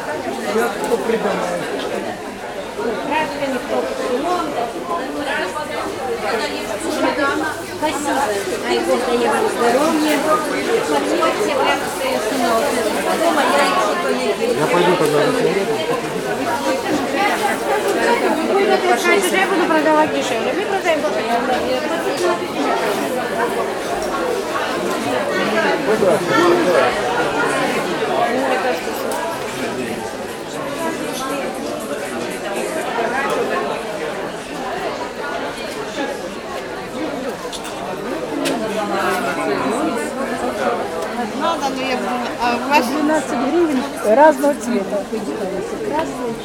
Ploshcha Pryvokzalʹna, Slavutych, Kyivska oblast, Ukrajina - Saturday morning walk around the local fresh produce market